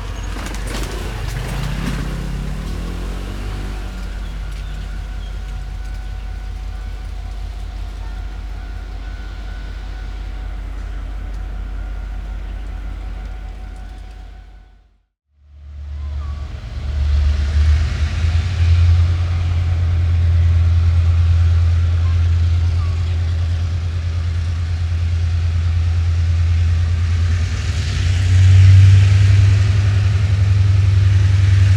Fongshan, Kaohsiung - Beside the railroad